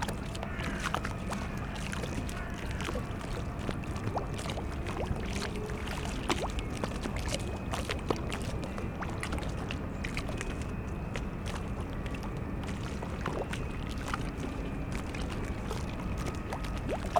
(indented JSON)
{
  "title": "berlin, plänterwald: spree - the city, the country & me: spree river bank",
  "date": "2014-02-08 12:43:00",
  "description": "lapping waves of the spree river, squeaking drone of the ferris wheel of the abandonned fun fair in the spree park, distant sounds from the power station klingenberg, towboat moves empty coal barges away, crows\nthe city, the country & me: february 8, 2014",
  "latitude": "52.49",
  "longitude": "13.49",
  "timezone": "Europe/Berlin"
}